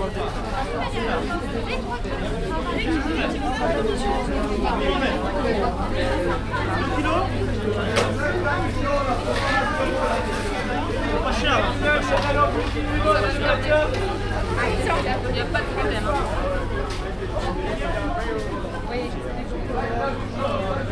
Saintes. Indoor market ambience
Indoor market. Short soundwalk around the stalls
Saintes, France, 13 July 2011, 10:24